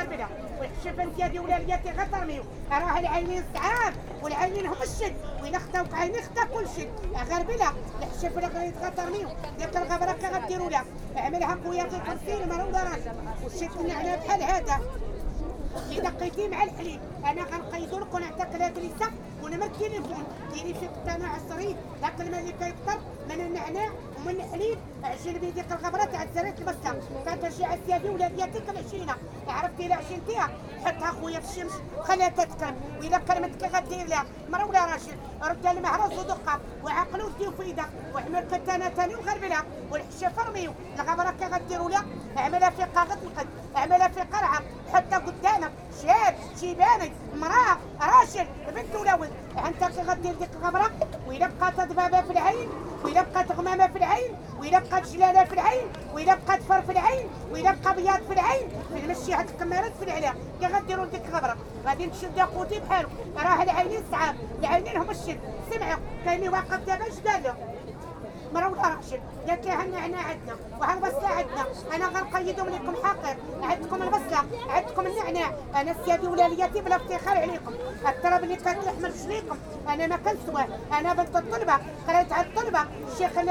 a woman sits on the ground, with a little wooden box and an old magazin, and talks very fast and intense and without a break.
(Sony D50, DPA4060)
Marrakesh, Morocco, 26 February 2014, 16:25